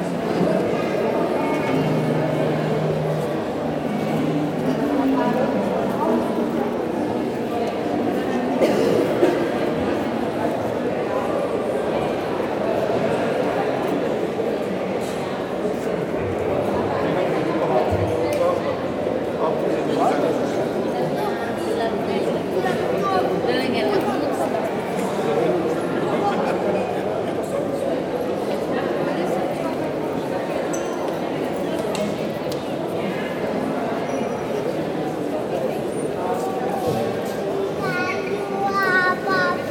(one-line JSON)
{"title": "Brussel, Belgium - The restaurants street", "date": "2018-08-25 13:30:00", "description": "Walking into the narrow 'rue des Bouchers', where every house is a restaurant, and where every restaurant is a tourist trap ! At the end of the walk, after the Delirium bar, the Jeanneke Pis, a small baby pissing, but this time it's a girl ! Sound of tourists, clients in the bar and a small dog.", "latitude": "50.85", "longitude": "4.35", "altitude": "23", "timezone": "GMT+1"}